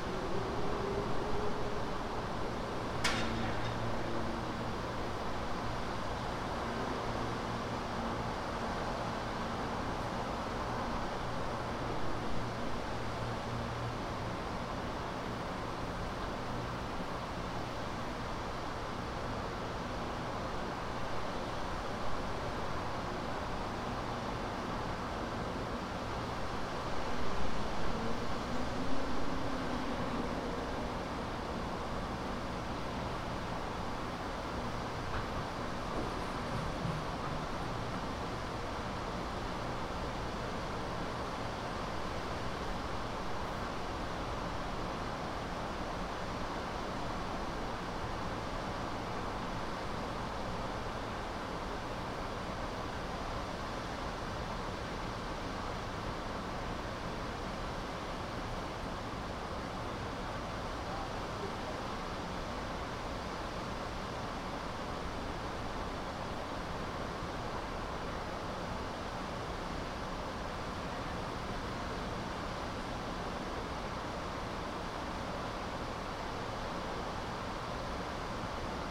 St NE, Atlanta, GA, USA - Saturday afternoon in the city
The sound of Atlanta on a Saturday afternoon, as heard from a patio of a condo. The traffic wasn't particularly heavy, but cars are still heard prominently. At certain points, muffled sounds from the condo behind the recorder bleed into the microphones. It was gusty, so subtle wind sounds can also be heard. Minor processing was applied in post.
[Tascam DR-100mkiii, on-board uni mics & windmuff]